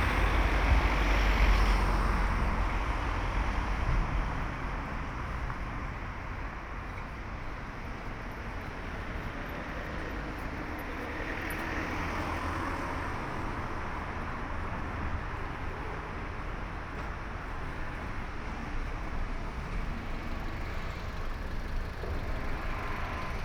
"It’s seven o’clock with bells on Wednesday in the time of COVID19" Soundwalk
Chapter XLVI of Ascolto il tuo cuore, città. I listen to your heart, city
Wednesday April 15th 2020. San Salvario district Turin, walking to Corso Vittorio Emanuele II and back, thirty six days after emergency disposition due to the epidemic of COVID19.
Start at 6:53 p.m. end at 7:21 p.m. duration of recording 28’09”
The entire path is associated with a synchronized GPS track recorded in the (kmz, kml, gpx) files downloadable here: